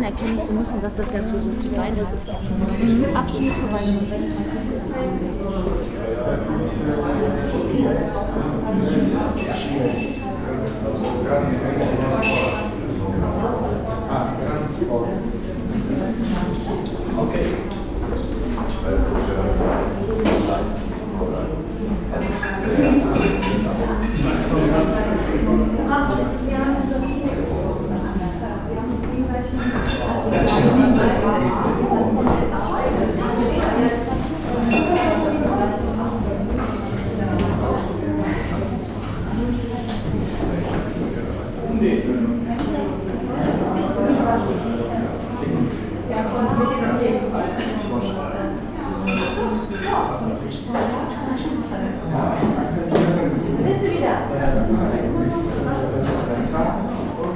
cologne, stadtgarten, atelier kaler
vernissagepublikum im ateier von m.kaler
stereofeldaufnahmen im september 07 nachmittags
project: klang raum garten/ sound in public spaces - in & outdoor nearfield recordings
2 May